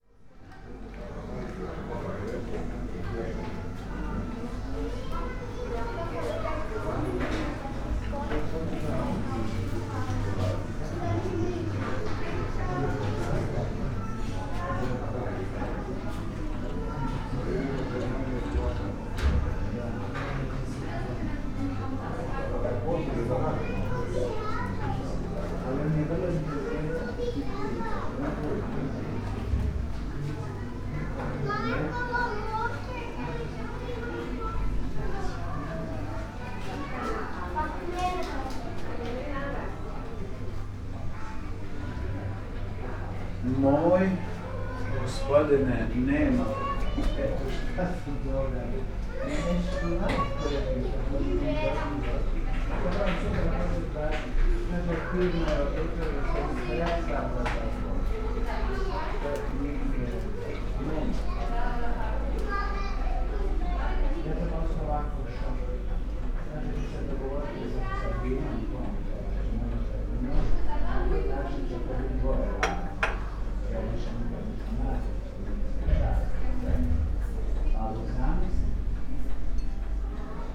Eufrazijeva ulica, Poreč, Croatia - quiet street shop
sounds of old clock and wooden furniture, making streets hum softer
20 July 2013